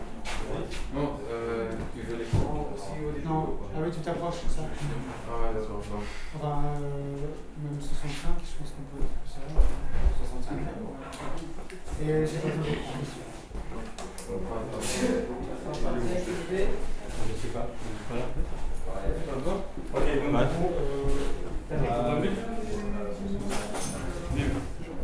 {"title": "Court-St.-Étienne, Belgique - Filmmaking", "date": "2016-09-02 15:10:00", "description": "Film production, in an old school transformed in a police station. The film is called \"La Forêt\" and it's a 6 times 52 mn (Nexus Production). The recording contains timeouts, and three shootings (3:12 mn, 10:49 mn, 14:37). It's a dumb sequence when a murderer is waiting to be interrogated. Thanks to the prod welcoming me on the filmmaking.", "latitude": "50.64", "longitude": "4.57", "altitude": "69", "timezone": "Europe/Brussels"}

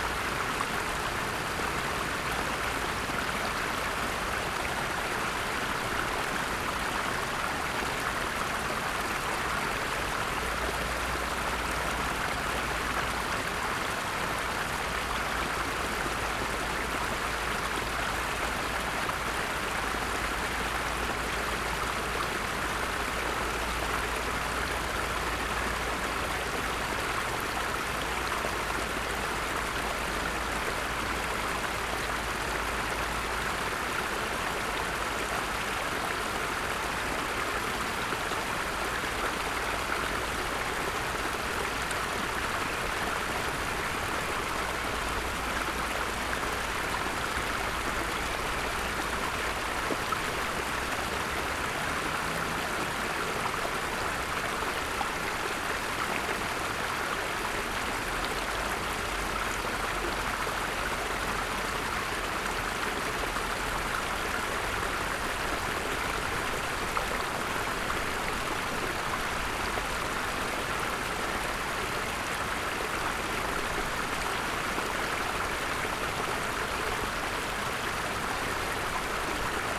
{"title": "Berlin, Görlitzer Park - little artificial creek", "date": "2011-04-08 19:40:00", "description": "artificial creek in Görlitzer Park, windy spring evening", "latitude": "52.50", "longitude": "13.44", "altitude": "39", "timezone": "Europe/Berlin"}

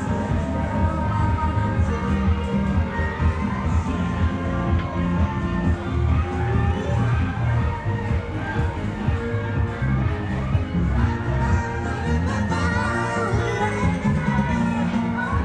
{"title": "三芝區新庄里, 新北市 - At the junction", "date": "2012-06-25 12:44:00", "description": "Traditional temple festival parade, Traffic Sound\nZoom H4n+Rode NT4 ( soundmap 20120625-36 )", "latitude": "25.27", "longitude": "121.51", "altitude": "31", "timezone": "Asia/Taipei"}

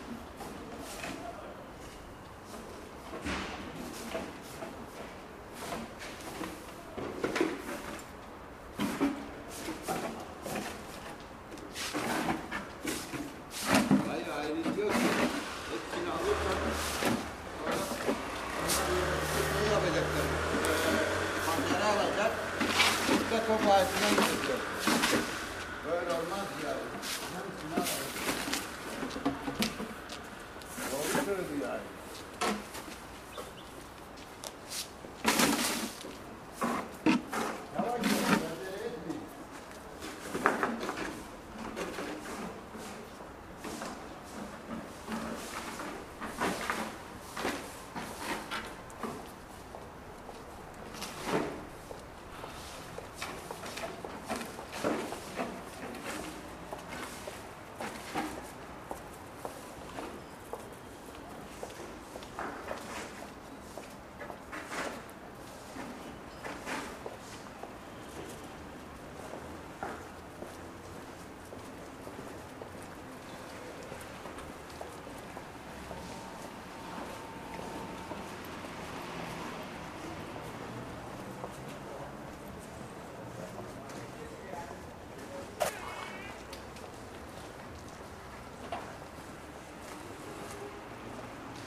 {"title": "Fullmoon Nachtspaziergang Part IX", "date": "2010-10-23 22:37:00", "description": "Fullmoon on Istanbul, continuing the nightwalk, passing a home delivery courier, road sweepers, a plastic recycler, tea drinkers and backgammon players.", "latitude": "41.06", "longitude": "28.99", "altitude": "124", "timezone": "Europe/Istanbul"}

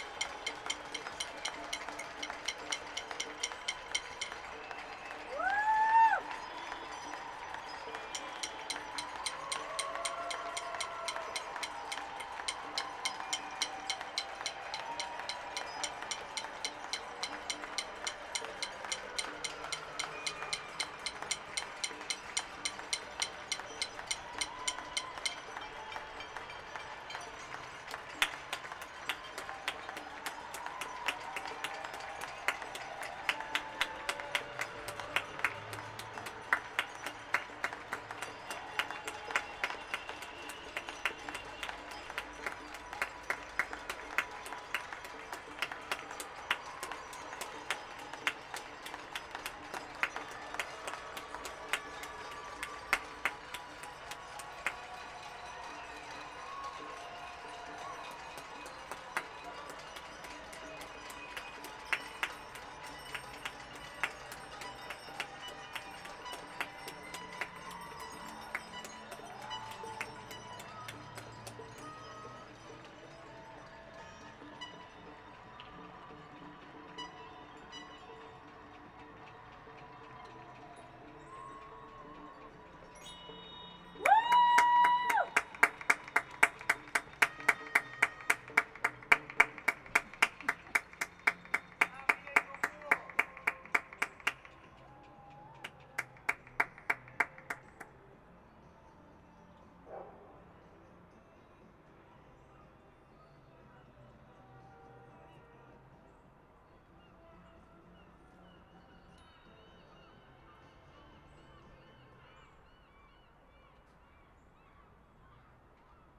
{"title": "Burnaby St, Vancouver, BC, Canada - West End gratitude soundscape in Covid-19 pandemic", "date": "2020-03-31 19:00:00", "description": "Neighbours from the West End showing gratitude at 7 p.m. from their balconies. Day by day the crowd seems to grow bigger.", "latitude": "49.29", "longitude": "-123.14", "altitude": "26", "timezone": "America/Vancouver"}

